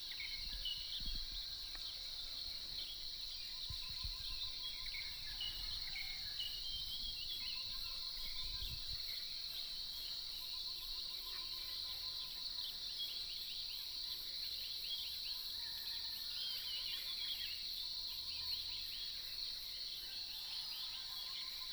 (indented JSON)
{
  "title": "Zhonggua Rd., Puli Township, Nantou County - Early morning",
  "date": "2015-06-11 05:07:00",
  "description": "Early morning, Bird calls, Croak sounds, Insects sounds",
  "latitude": "23.94",
  "longitude": "120.92",
  "altitude": "503",
  "timezone": "Asia/Taipei"
}